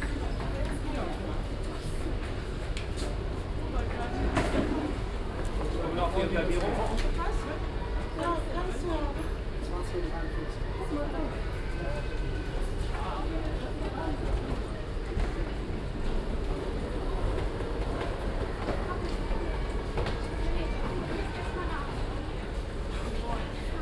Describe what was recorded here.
auf den gleisen am frühen abend, eine zugansage, soundmap d: social ambiences, topographic field recordings